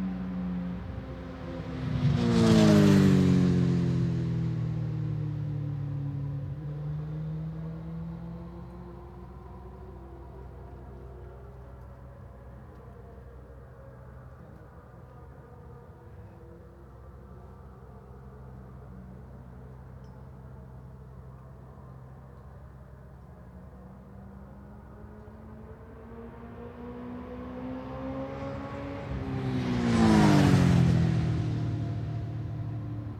Brands Hatch GP Circuit, West Kingsdown, Longfield, UK - british superbikes 2004 ... superbikes ...
british superbikes 2004 ... superbikes qualifying two ... one point stereo mic to minidisk ...
19 September 2004